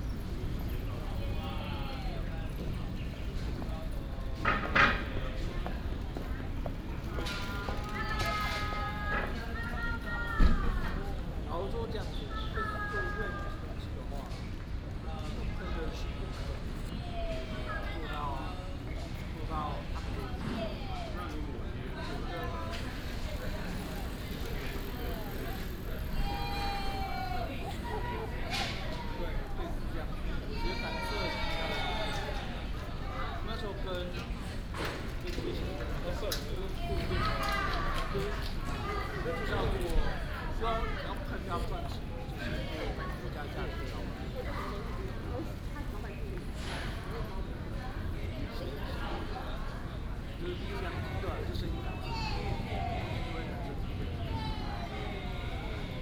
Ln., Sec., Keelung Rd., Da’an Dist. - In the university
In the university
22 February 2016, ~11:00